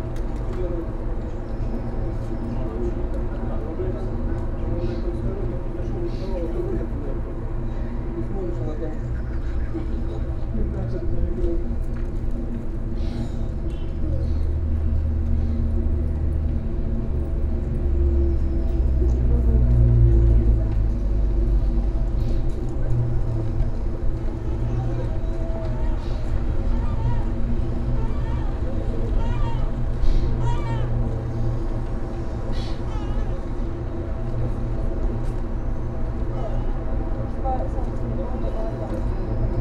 Tallinn, Baltijaam terrace poles - Tallinn, Baltijaam terrace poles (recorded w/ kessu karu)
hidden sounds, resonance inside two poles at the edge of a cafe tarrace at Tallinns main train station.